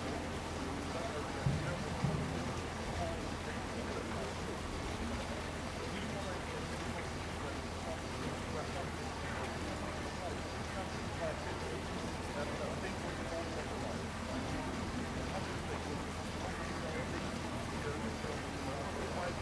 Śródmieście, Gdańsk, Polska - Neptune
Afternoon at the central tourist spot in Gdańsk - The Neptune. Tourists, street performers, restaurant music and nearby construction. Recorded with Zoom H2N.
12 September, 12:00